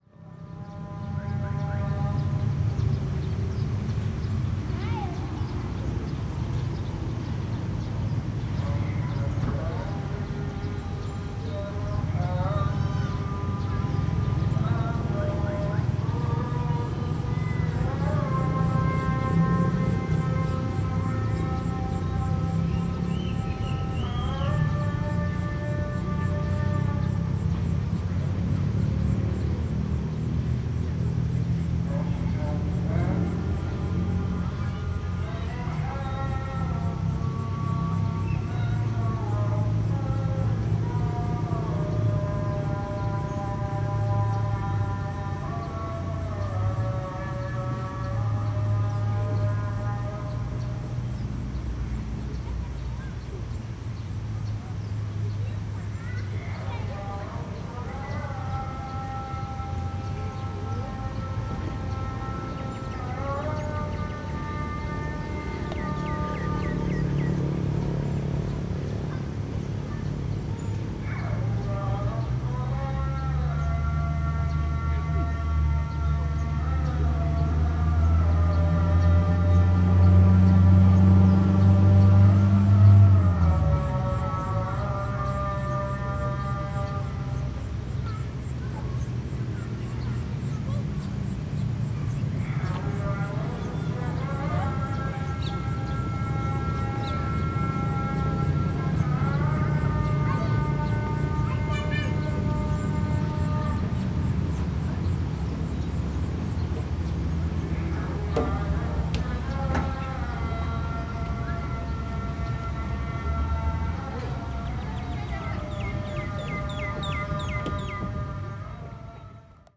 Tanah Rata, Pahang, Malaysia - drone log 21/02/2013 b
Tanah Rata Gardens, afternoon ambience with muezzin
(zoom h2, build in mic)